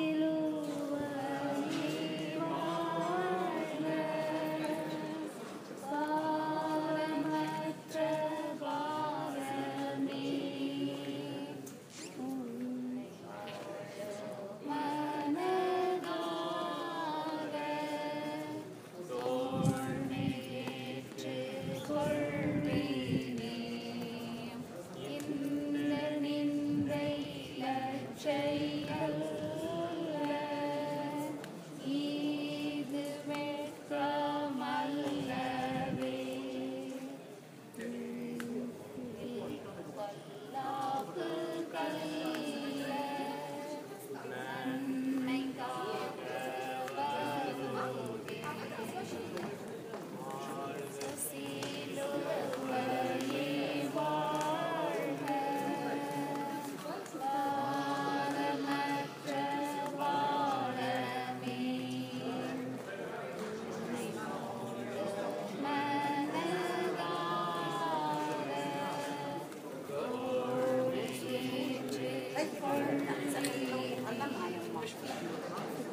Indian group chanting christian song